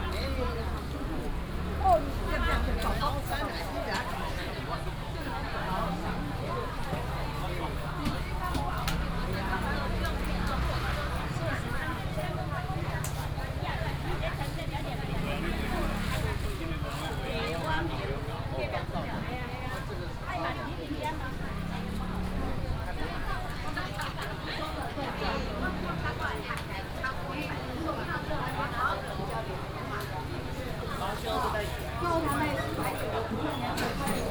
Fenglian St., Xinfeng Township - In the alley
In the alley inside the traditional market, vendors peddling, Binaural recordings, Sony PCM D100+ Soundman OKM II